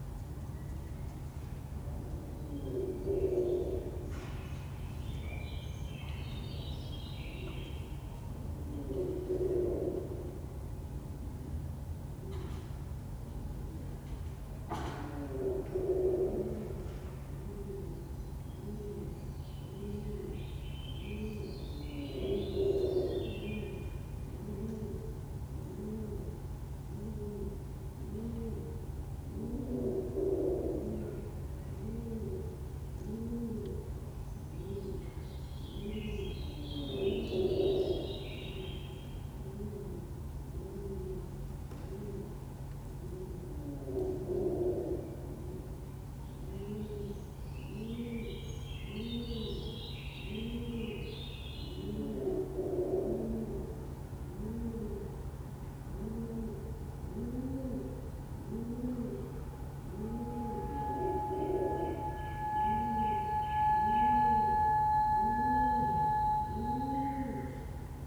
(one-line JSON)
{"title": "Hiddenseer Str., Berlin, Germany - The first (fake) cuckoo of spring - from my 3rd floor window 8 days into Covid-19 restrictions", "date": "2020-03-28 09:52:00", "description": "Imagine my surprise to hear a cuckoo 'cuckoo-ing' in the Hinterhof. Definitely a first, so I rushed to record through the window. The cuckoo-ing was quickly followed by a female cuckoo 'bubbling', then a peregrine falcon, then a blackcap warbling and other species - a very welcome explosion of bio-diversity in under a minute. Was very pleased to find that someone had taken to playing bird song tracks from their own open window - not loud, but pleasantly clear. Quite different from the normal TVs and music. It's a great idea but I don't know who is doing it as yet. This part of Berlin has had none of the coordinated clapping or bell ringing in response to Covid-19 as described by others. But these short, one-off, spontaneous sonic gestures are totally unexpected and very nice. Fingers crossed for more. Perhaps the beginnings of a new sonic art form. Interesting to hear that the real birds (pigeons) just carried on as normal, completely un-worried by the new sonic arrivals.", "latitude": "52.54", "longitude": "13.42", "altitude": "60", "timezone": "Europe/Berlin"}